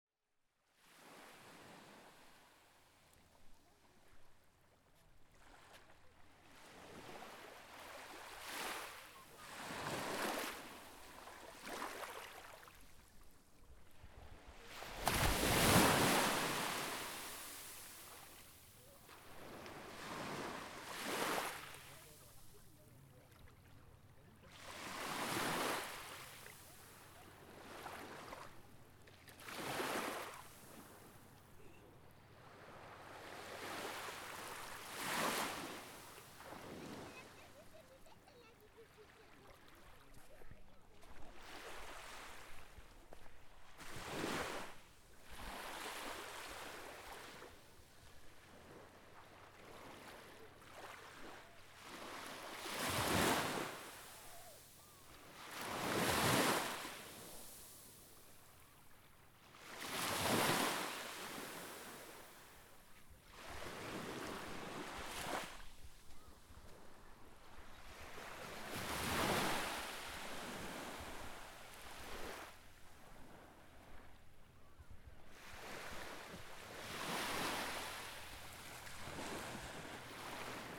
Trégastel, Bretagne, France. - quiet waves [Grève blanche]
Tregastel, Grève blanche.Ambiance très calme sur la plage, quelques voix et mouettes.Petites vagues.
Tregastel, Grève blanche.Very quiet ambiance on the beach.Soft waves.Somes voices and seagulls
5 August 2011, 11:50